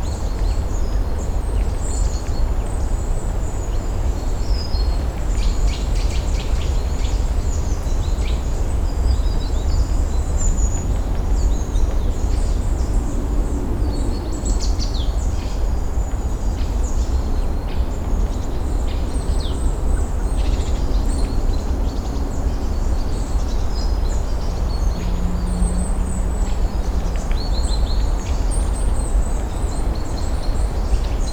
Morasko Nature Reserve - autumn moring
morning nature sounds in the Morasko forest. (roland r-07)